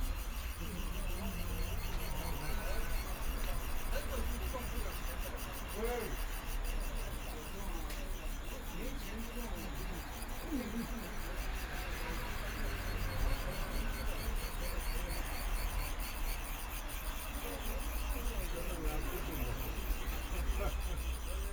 South Xizang Road, Shanghai - Bird and flower market
walk in the Bird and flower market, Binaural recording, Zoom H6+ Soundman OKM II